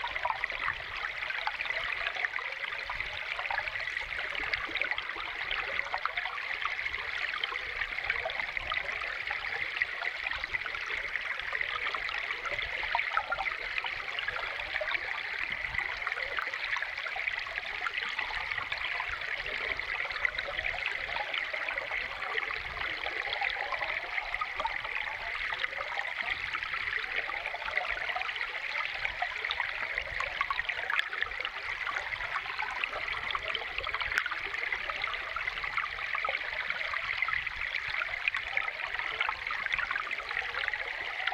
1745 N Spring Street #4 - Water Spring on Owens Lake
Metabolic Studio Sonic Division Archives:
Water spring on Owens Dry Lake. Recorded with H4N stereo microphones and 1 underwater microphone
California, United States, 24 August 2022, 12:00